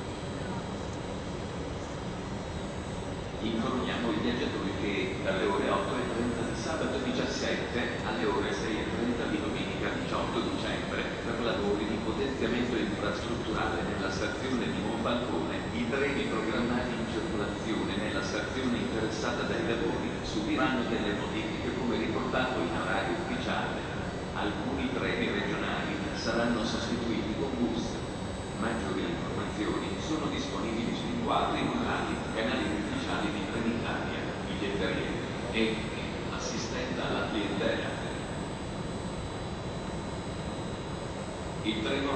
{"title": "Cannaregio, Venice, Włochy - St.Lucia railway station (binaural)", "date": "2016-12-14 10:29:00", "description": "Binaural recording from platform 11\nOLYMPUS LS-100", "latitude": "45.44", "longitude": "12.32", "altitude": "2", "timezone": "GMT+1"}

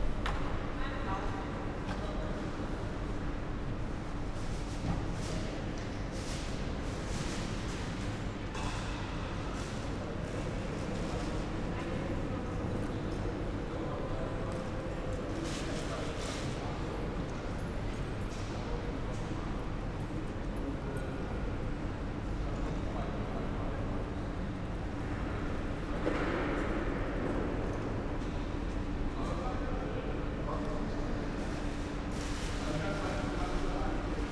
{"title": "Bahnhof, Koblenz, Deutschland - station hall ambience", "date": "2022-05-09 13:35:00", "description": "Koblenz main station, Monday afternoon, hall ambience\n(Sony PCM D50, Primo EM172)", "latitude": "50.35", "longitude": "7.59", "altitude": "77", "timezone": "Europe/Berlin"}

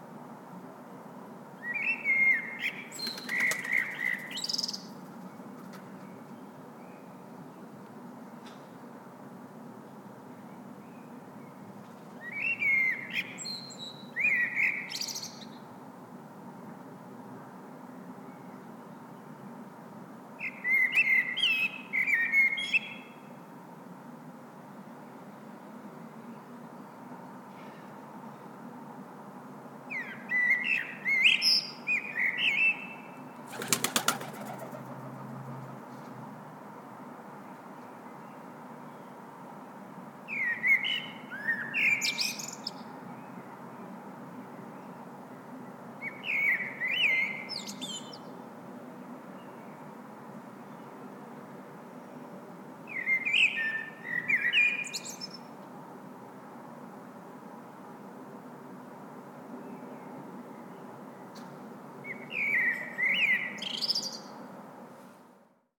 {"title": "Former radiofonia studio, Kraków, Poland - (123 ORTF) Blackbird and Pigeon", "date": "2017-05-10 15:17:00", "description": "Stereo recording made from a window of a former radiofonia studio. Blackbird singing with some sound from a pigeon and wings flapping.\nRecorded with Soundman OKM on Sony PCM D100", "latitude": "50.04", "longitude": "19.94", "altitude": "204", "timezone": "Europe/Warsaw"}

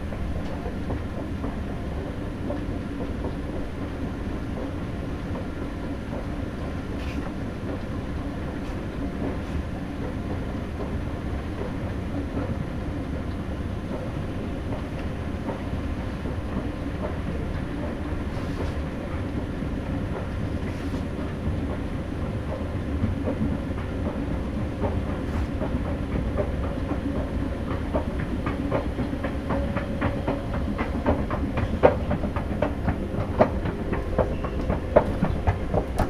{"title": "Musée d'Art Moderne et Contemporain, Strasbourg, Frankreich - Escalator and transition profile on floor adjacent to top end of escalator", "date": "2021-08-29 12:00:00", "description": "Going up the escalator in the Musée d'Art Moderne et Contemporain in Strasbourg on a sunday at noon. The escalator appeared as the heart of the museum as its characteristic rythmical sound was audible almost everywhere in the building. From the escalator you turn right and cross a transition profile connecting two types of flooring. When you step on the profile the plastic material emits various kinds of squeaky sounds. Recorded with an Olympus LS 12 Recorder using the built-in microphones. Recorder hand held, facing slightly downwards.", "latitude": "48.58", "longitude": "7.74", "altitude": "140", "timezone": "Europe/Paris"}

